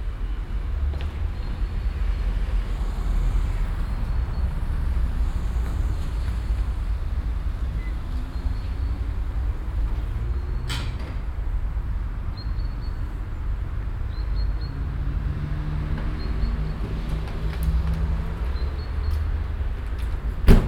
cologne, neusser strasse, tankstelle
morgens an einer tankstelle, an - und abfahrende fahrzeuge, schritte, das schlagen türen, betrieb der zapfsäulen
soundmap nrw: topographic field recordings & social ambiences